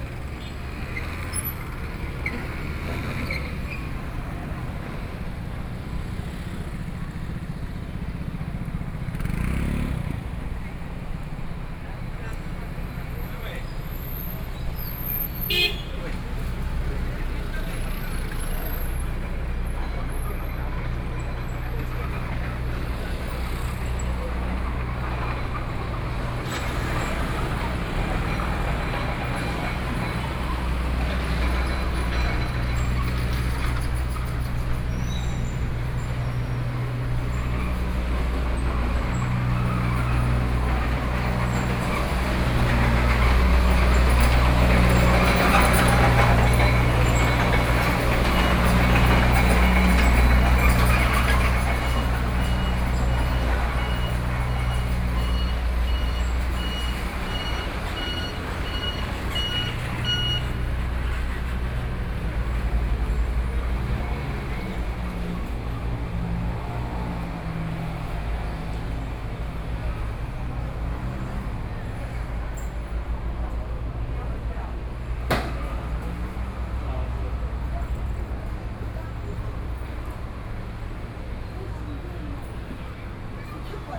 Walking through the traditional market, Construction noise, Traffic Sound
Kaohsiung City, Taiwan